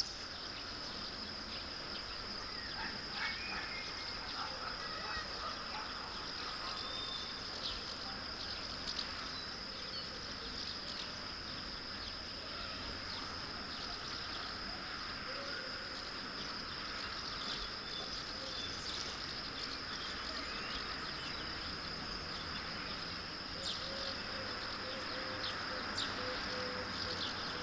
Monção, Portugal - Monção ambient
Monção general ambient. DAT recording (Tascam DAP1), cardioid mic (AKG CK91)
12 June 2005, 11:15